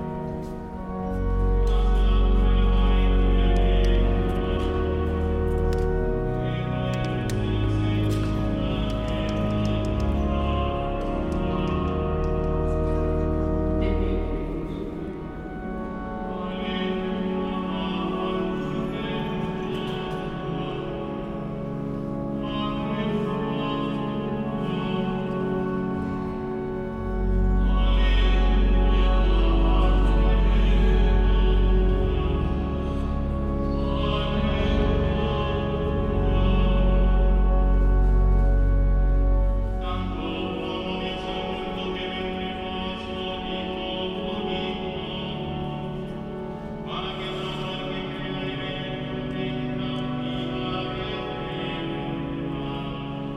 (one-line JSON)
{"title": "Catedral Metropolitana, Ciudad de México, D.F., Mexico - Escorted Out of a Latin Mass", "date": "2016-04-06 13:10:00", "description": "Recorded with a pair of DPA4060's and a Marantz PMD661", "latitude": "19.43", "longitude": "-99.13", "altitude": "2241", "timezone": "America/Mexico_City"}